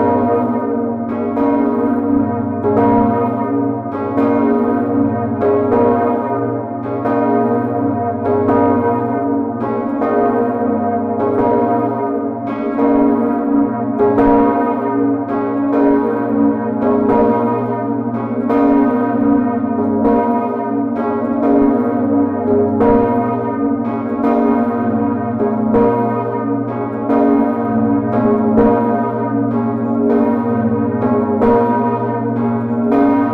Archive recording of the two beautiful bells of the Amiens cathedral. Recorded into the tower, with an small Edirol R07. It's quite old. The bells are 4,5 and 3,6 tons.
11 April 2011, 11:00